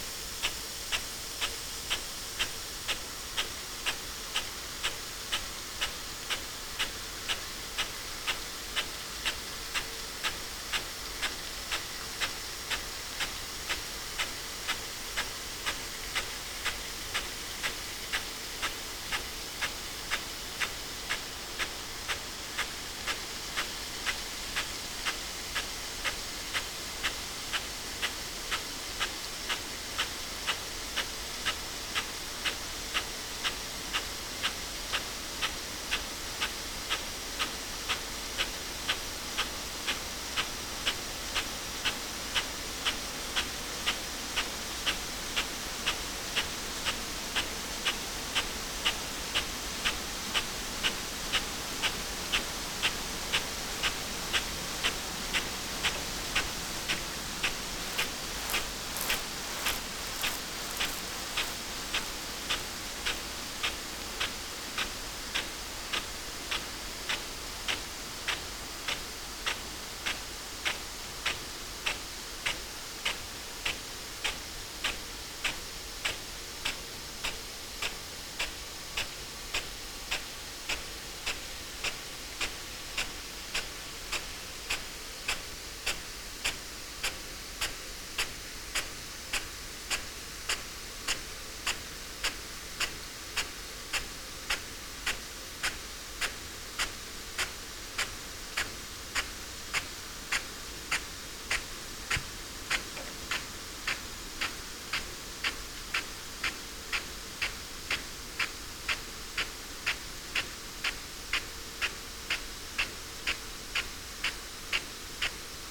Malton, UK - potato irrigation ...
potato irrigation ... bauer rainstar e41 with irrigation sprinkler ... xlr sass on tripod to zoom h5 ... absolutely love it ...
North Yorkshire, England, United Kingdom, 16 July